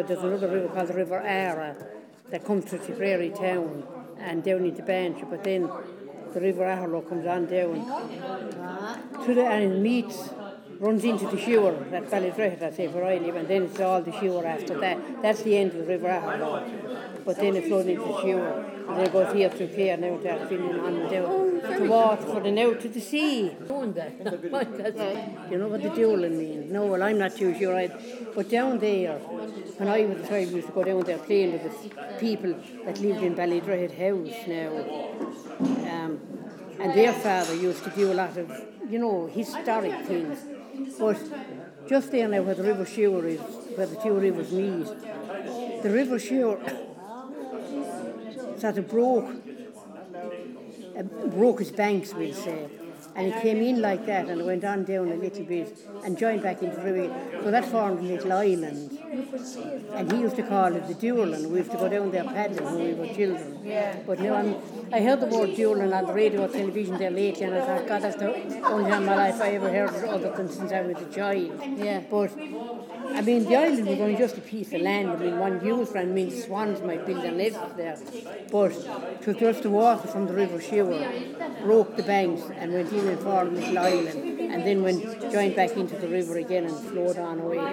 {
  "title": "Ballydrehid, South Tipperary, Co. Tipperary, Ireland - Teresa Map of the River Suir",
  "date": "2014-03-21 12:00:00",
  "description": "Teresa from Ballydrehid, Tipperary describes the route of the River Suir from a hand drawn map. Recorded as part of the Sounding Lines visual art project by Claire Halpin and Maree Hensey which intends to isolate and record unusual and everyday sounds of the River Suir in a visual way. Communities will experience a heightened awareness and reverence for the river as a unique historical, cultural and ecological natural resource. The artists will develop an interactive sound map of the River which will become a living document, bringing the visitor to unexpected yet familiar places. This residency was commissioned by South Tipperary County Council Arts Service and forms part of an INTERREG IVB programme entitled Green and Blue Futures. South Tipperary County Council is one of the partners of this European Partnership Project.",
  "latitude": "52.40",
  "longitude": "-7.94",
  "altitude": "67",
  "timezone": "Europe/Dublin"
}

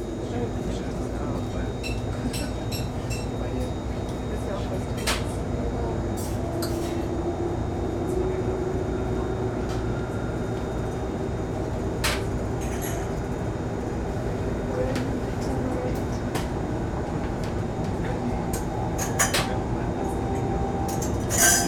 {
  "title": "ICE Ffm - Cologne - Bistro",
  "date": "2009-08-03 12:50:00",
  "description": "working conditions: ICE3 board bistro, people ordering. background soundscape of engine and various changing high freqiencies.\n(zoom h2, builtin mics, 120°)",
  "latitude": "50.40",
  "longitude": "8.01",
  "altitude": "197",
  "timezone": "Europe/Berlin"
}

